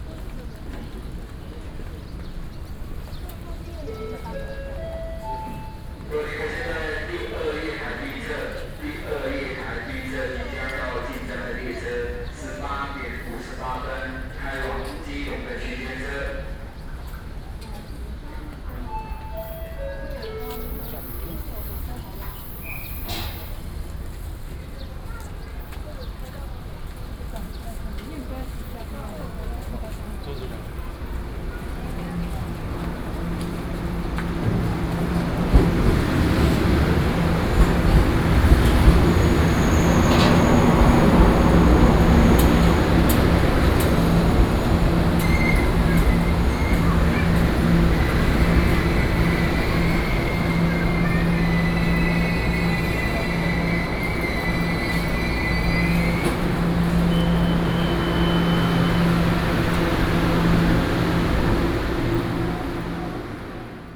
Yingge Station, New Taipei City - In the station platform
In the station platform, Train arrival platform
Sony PCM D50+ Soundman OKM II